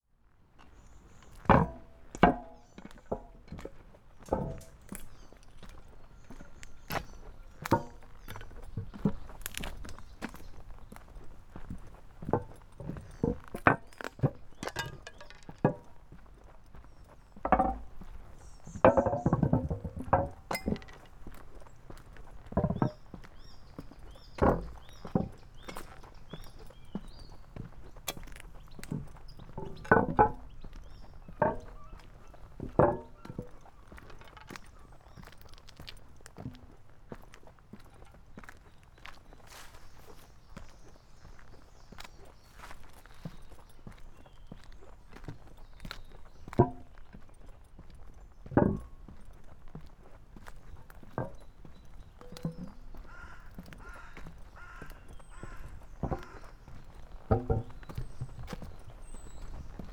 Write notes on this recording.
walk in the opposite direction